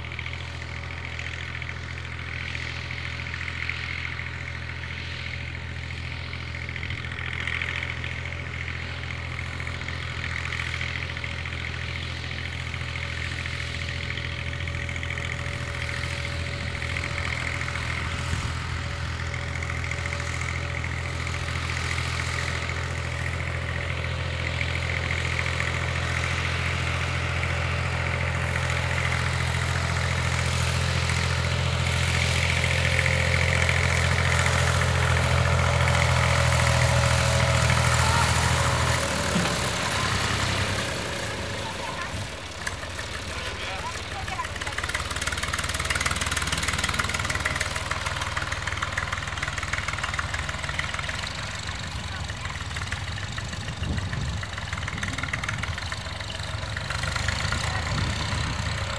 Radojewo, Poligonowa Road - sowing grains
a farming couple on a tractor sowing grains.
Polska, European Union, 25 April, 12:07